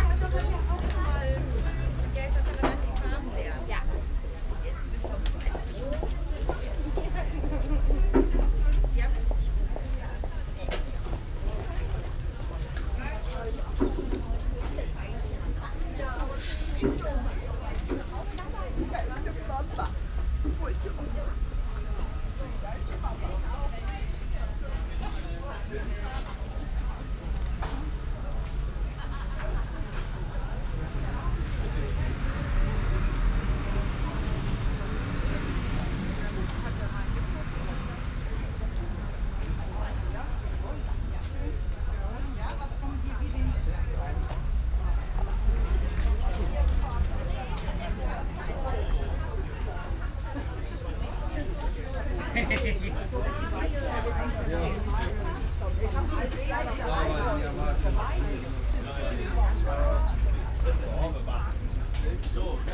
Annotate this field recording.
wochenmarkt, refrath, gang zwischen ständen, mittags, soundmap nrw - social ambiences - topograpgic field recordings